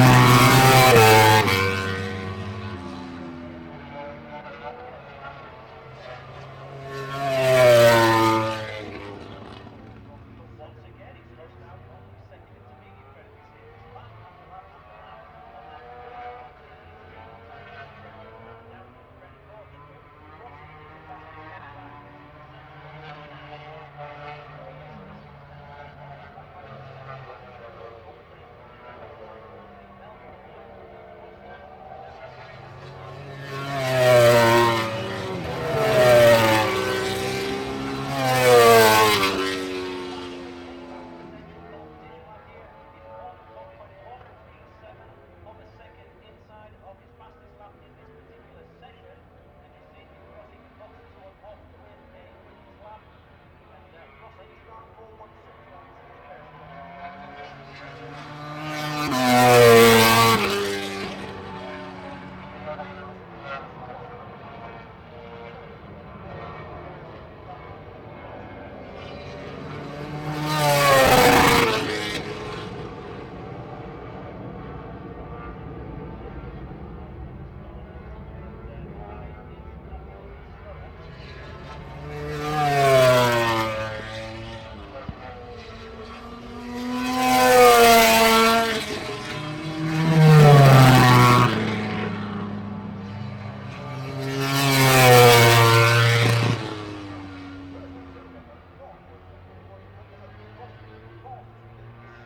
Derby, UK - british motorcycle grand prix 2006 ... motogp free practice 2 ...
british motorcycle grand prix 2006 ... motogp free practice 2 ... one point stereo to minidisk ... commentary ...
England, United Kingdom, 30 June 2006